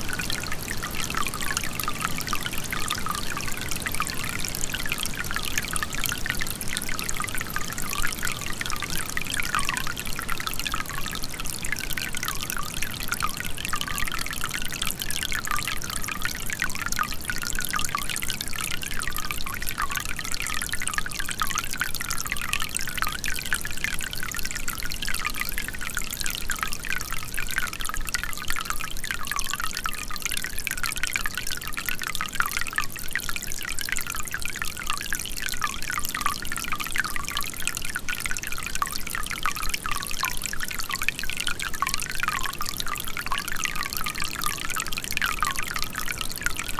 Šlavantai, Lithuania - Water spring running through a pipe
A stereo recording of a water spring running through a specially installed PVC pipe. Some forest ambience and wind can be heard as well. Recorded using ZOOM H5.